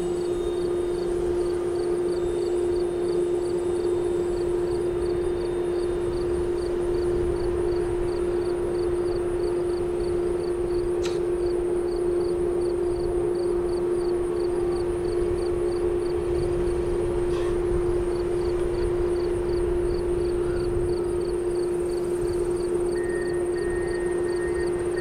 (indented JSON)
{
  "title": "Concrete Plant, Valley Park, Missouri, USA - Concrete Plant",
  "date": "2020-08-27 18:41:00",
  "description": "Recording from on top of Valley Park Meramec Levee of concrete plant. Sounds from soccer fields on other side of levee also heard",
  "latitude": "38.55",
  "longitude": "-90.48",
  "altitude": "124",
  "timezone": "America/Chicago"
}